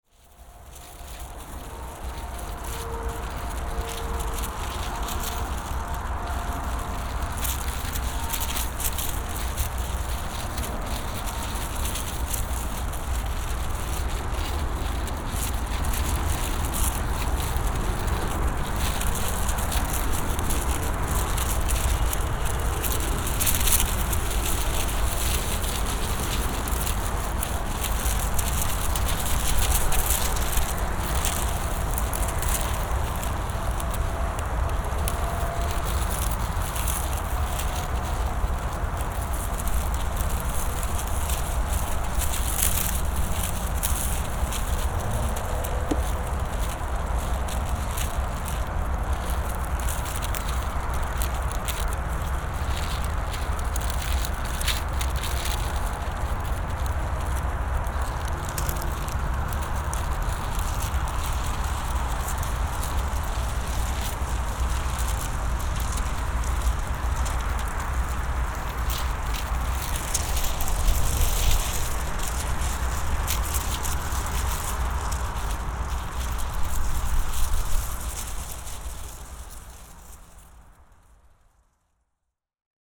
installation Forteresse de l'artiste UPGRAYYDD RECIDIVE Toulouse, France - Une installation faite de couverture de survie dans le vent
Son pris dans une exposition sauvage "Crève Hivernale".
Je suis devant une installation, un abris fait de couverture de survie. Avec le temps, ces abris appelés "Forteresses" se détériorent et des lambeaux de couverture de survie chuchotent d'étranges messages grésillants. C'est aussi beau visuellement qu'auditivement.
Pour apprécier au mieux la prise son, écouter au casque car la prise son est binaurale (son à 360degré).
16 January 2017